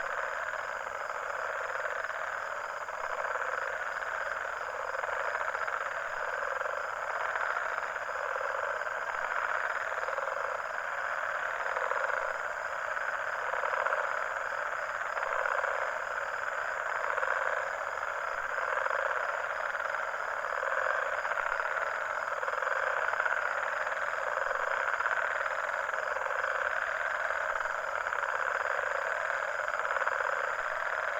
CILAOS 3 MARES - 20190119 11h25 CILAOS 3-mares
Réunion, 19 January 2019, ~23:00